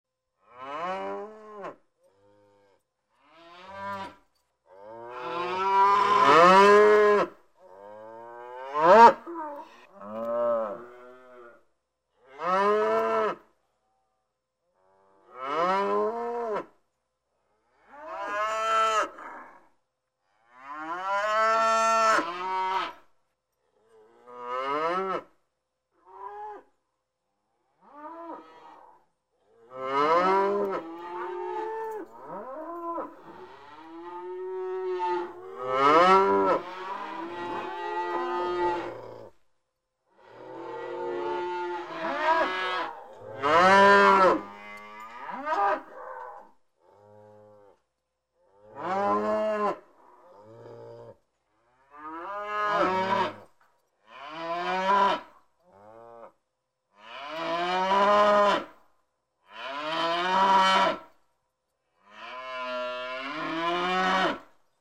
enscherange, cow herd
A herd of cows nearby the street on a big meadow. A short excerpt of the sound of them mooing on a mild windy summer afternoon.
Eine Kuhherde nahe der Straße auf einer großen Wiese. Ein kurzer Auszug aus dem Geräusch von ihrem Muhen an einem milden windigen Sommernachmittag.
Enscherange, troupeau de vaches
Un troupeau de vaches dans une prairie proche de la route. Petit extrait du son de meuglement sur fond d’après-midi d’été doux et venteux.
Luxembourg